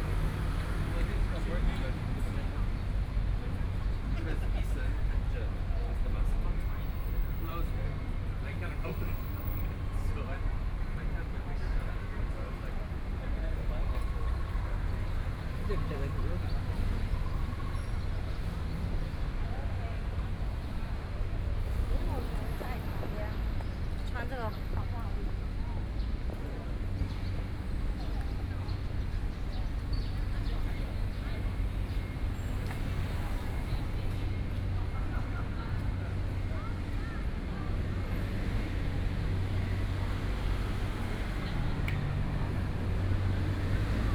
大安區古莊里, Taipei City - Walking through the park
Walking through the park, Traffic Sound